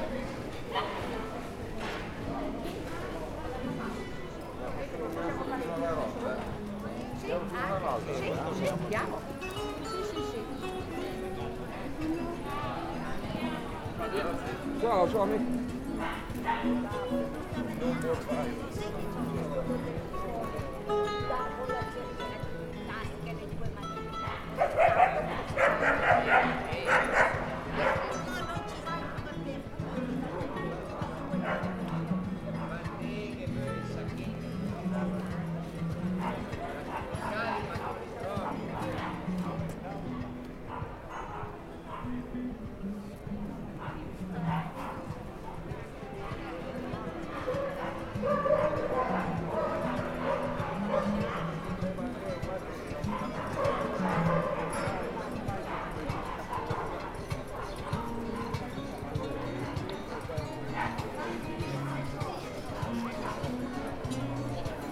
Spaziergang entlang der Küste, vorbei an Baren mit Musik und Menschengruppen im Gespräch. Der Duft von Meer und feinen Speisen in der Luft.